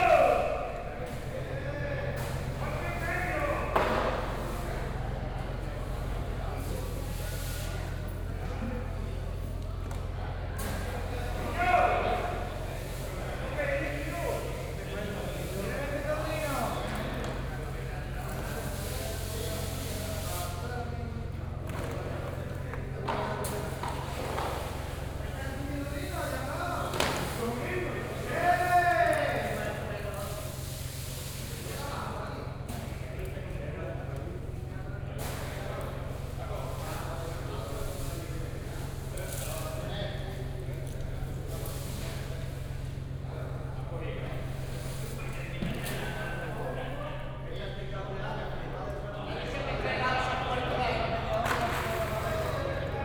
Fuengirola, España - Subasta de Pescado / Fish Auction
La clásica forma de subastar el pescado a voces ha sido remplazada por la subasta electrónica pero los gritos y las típicas formas de subastar pescado siguen presentes / The classical way of auction by voice now is replaced by electronic biding but the shouts and the typical manners on a fish auction still remains